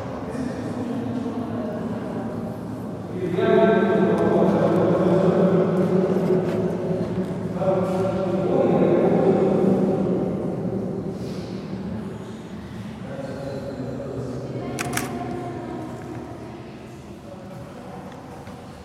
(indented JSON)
{
  "title": "Pumping Plant Danube Island",
  "date": "2011-12-08 14:45:00",
  "description": "descending spiral stairs and singing in pump room of sewage pumping plant on Donauinsel (Danube Island) adjacent to the 2006 quantum teleportation experiment site.",
  "latitude": "48.19",
  "longitude": "16.46",
  "altitude": "160",
  "timezone": "Europe/Vienna"
}